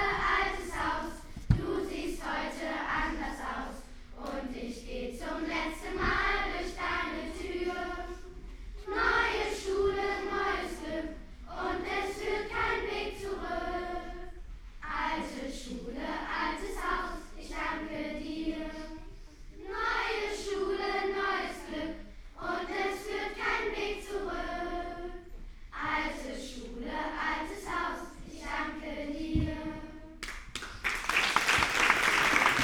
farewell song, primary school has finished after 4 years.
(Sony PCM D50, Primo EM172)